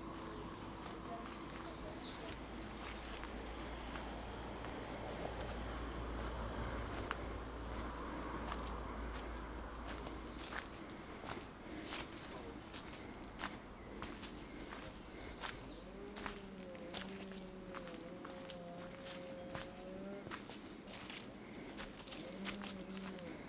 Via Maestra, Rorà TO, Italia - Rorà Soundwalk-220625
Duration: 39'45"
As the binaural recording is suggested headphones listening.
Both paths are associated with synchronized GPS track recorded in the (kmz, kml, gpx) files downloadable here: